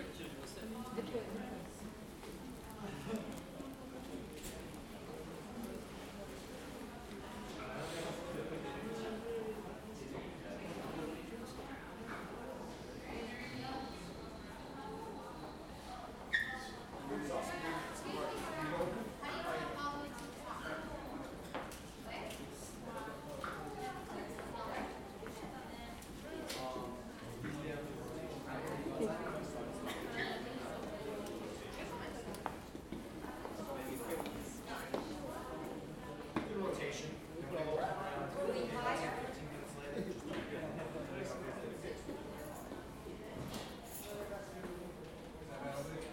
NYC, empire state building, observation deck 86th floor, inside;
NY, USA, 14 February